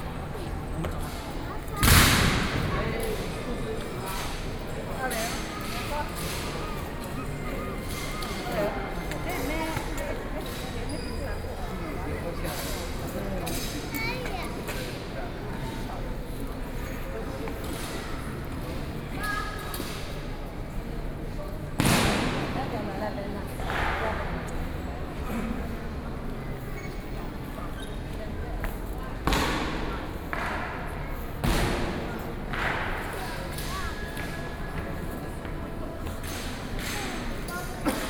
Sun Yat-Sen Memorial Hall, Xinyi District - Guards ritual performances